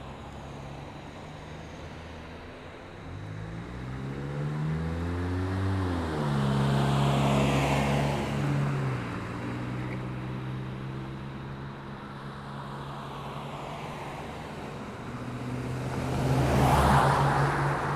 The College of New Jersey, Pennington Road, Ewing Township, NJ, USA - Entrance to the College of New Jersey